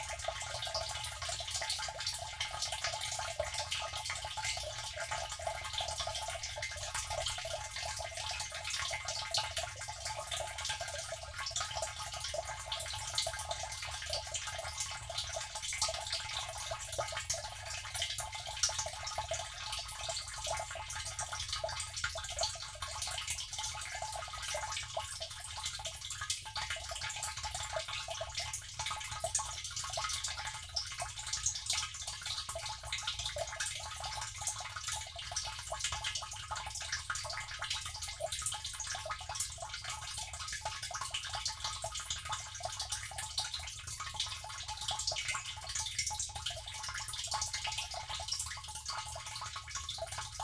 Luttons, UK - water butt filling ...
water butt filling ... dpa 4060s on pegs to Zoom H5 ... one water butt connected to another ...
Helperthorpe, Malton, UK, 23 September 2020, ~11:00